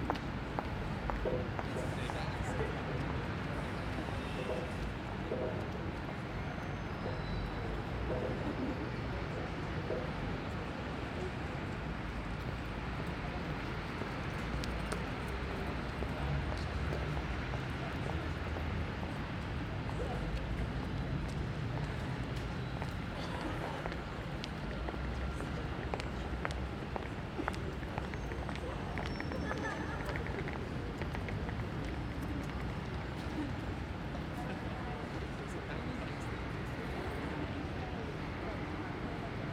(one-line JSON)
{"title": "British Library, Greater London, Vereinigtes Königreich - British Library, London - Venue ambience a few minutes before the 'In the Field' symposium", "date": "2013-02-15 10:22:00", "description": "British Library, London - Venue ambience a few minutes before the 'In the Field' symposium.\nFive minutes later 'In the Field' - a symposium 'exploring the art and craft of field recording' - was opened vis-à-vis in the British Library's conference center. Presenters included Ximena Alarcón, Angus Carlyle, Des Coulam, Peter Cusack, Simon Elliott, Felicity Ford, Zoe Irvine, Christina Kubisch, Udo Noll – Radio Aporee, Cheryl Tipp, David Vélez, Chris Watson, and Mark Peter Wright.\n[I used a Hi-MD-recorder Sony MZ-NH900 with external microphone Beyerdynamic MCE 82].", "latitude": "51.53", "longitude": "-0.13", "altitude": "26", "timezone": "Europe/London"}